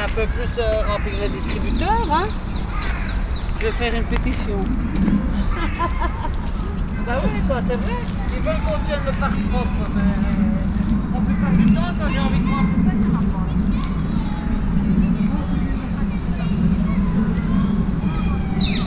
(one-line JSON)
{"date": "2009-11-21 14:27:00", "description": "Brussels, Parc Duden\nChildren playing, people wandering.", "latitude": "50.82", "longitude": "4.33", "altitude": "68", "timezone": "Europe/Brussels"}